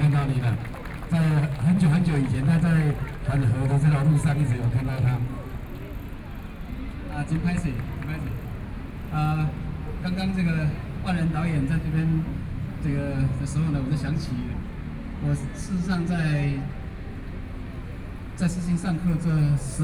Chiang Kai-Shek Memorial Hall - soundwalk
From the square go out to the roadside, Sony PCM D50 + Soundman OKM II
Taipei City, Taiwan, 16 August 2013